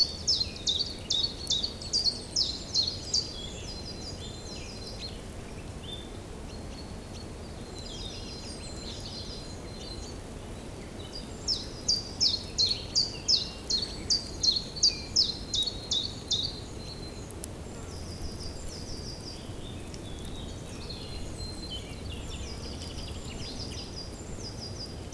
Genappe, Belgique - Common Chiffchaff
A very great sunny sunday, song of the common chiffchaff in the big pines.